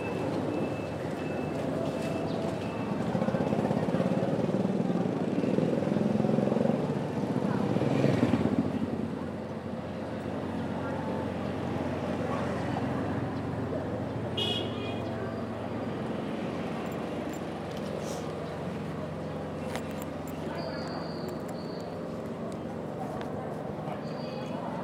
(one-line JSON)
{"title": "Unnamed Road, Maymyo, Myanmar (Birma) - pyin u lwin may myo central market II", "date": "2020-02-23 13:12:00", "description": "pyin u lwin may myo central market II", "latitude": "22.02", "longitude": "96.46", "altitude": "1083", "timezone": "Asia/Yangon"}